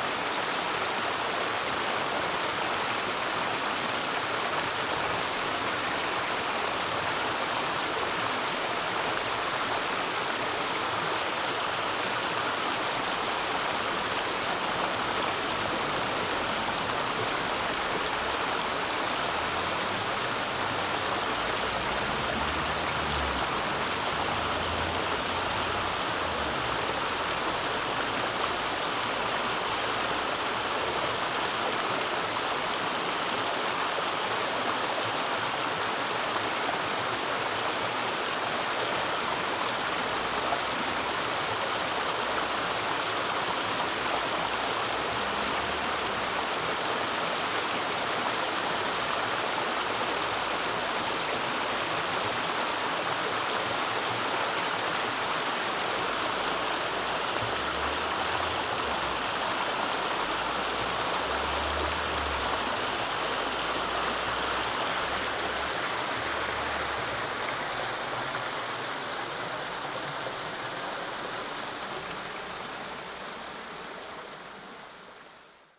Tech Note : SP-TFB-2 binaural microphones → Sony PCM-M10, listen with headphones.
France métropolitaine, France